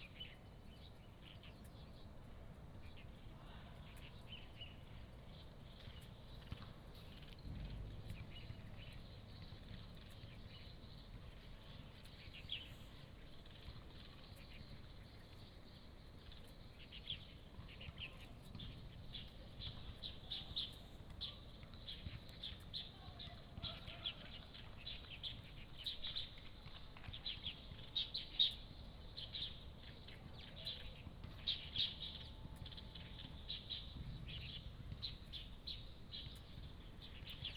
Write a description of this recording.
in the Park, Birdsong In the distance the sound of playing basketball